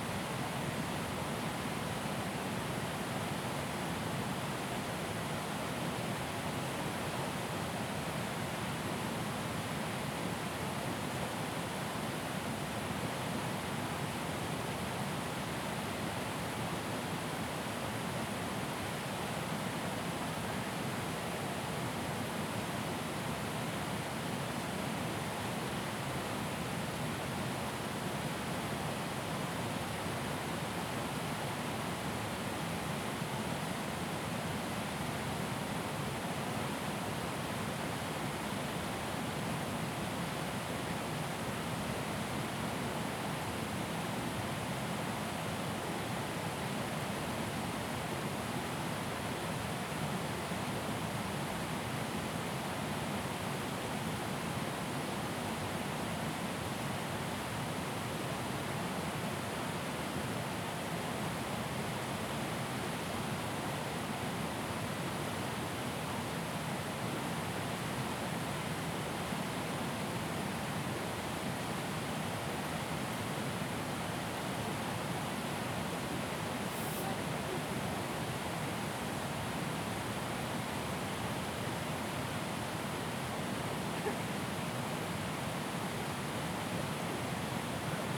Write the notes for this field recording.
Weir Recording in Colchester, slightly windy on a friday about 3 o clock.